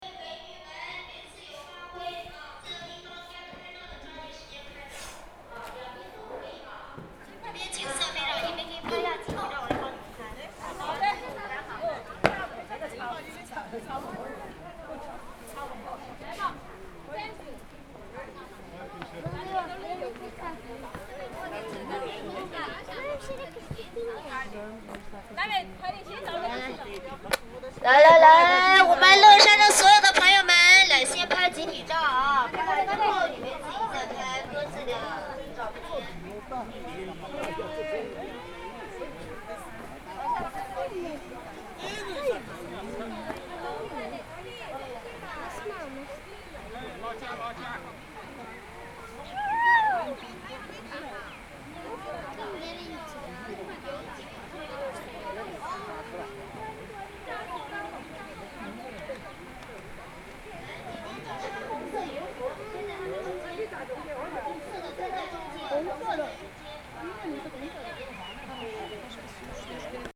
Imperor Secretary Gradens, Suzhou, general ambients and tour guides with megaphones